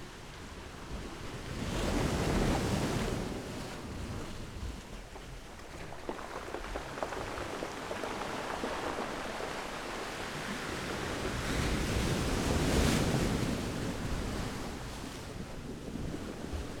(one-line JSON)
{"title": "Las Palmas, Gran Canaria, stones in water", "date": "2017-01-24 13:10:00", "latitude": "28.15", "longitude": "-15.43", "altitude": "11", "timezone": "Atlantic/Canary"}